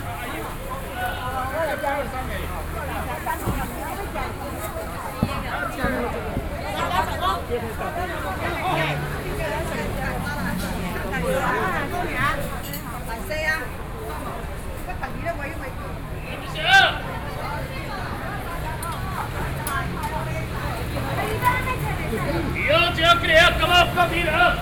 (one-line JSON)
{"title": "Ln., Minquan W. Rd., Datong Dist., Taipei City - Traditional markets", "date": "2012-11-04 08:43:00", "latitude": "25.06", "longitude": "121.51", "altitude": "13", "timezone": "Asia/Taipei"}